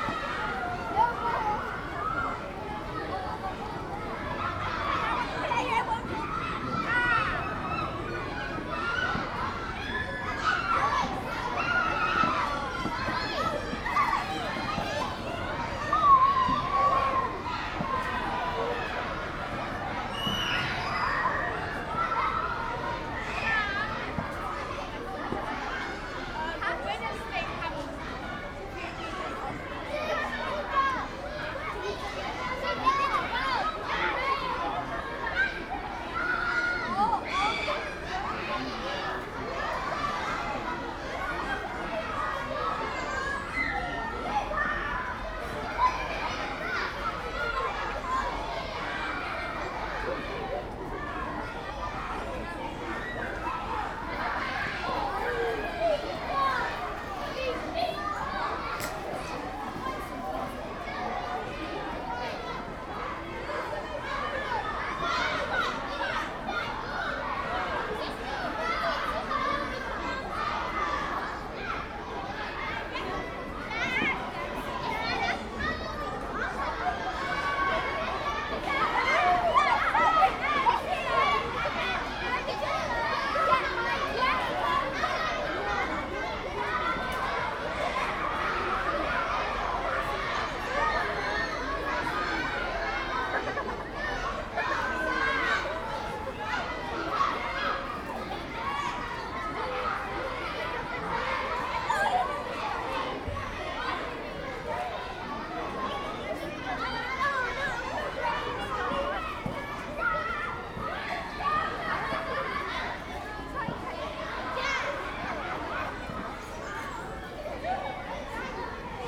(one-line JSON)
{"title": "St. Mary Abbots Gardens, Drayson Mews, Kensington, London, UK - St. Mary Abbots Gardens school playground", "date": "2019-05-07 13:27:00", "description": "Lunch in the park next to a school playground", "latitude": "51.50", "longitude": "-0.19", "altitude": "20", "timezone": "Europe/London"}